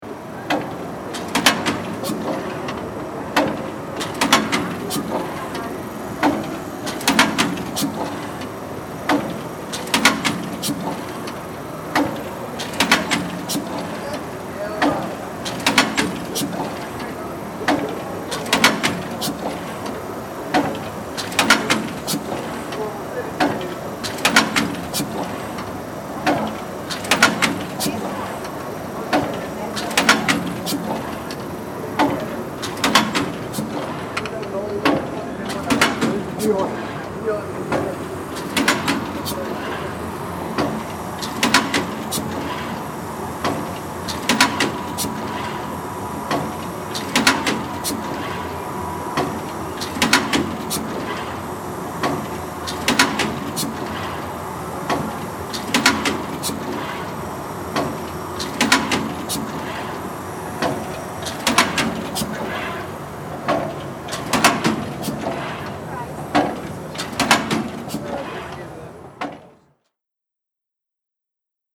Stockum, Düsseldorf, Deutschland - düsseldorf, trade fair, hall 15
Inside hall 15 of the Düsseldorf trade fair during the DRUPA. The close up recorded sound of of a folder machine.
soundmap nrw - social ambiences and topographic field recordings
Düsseldorf, Germany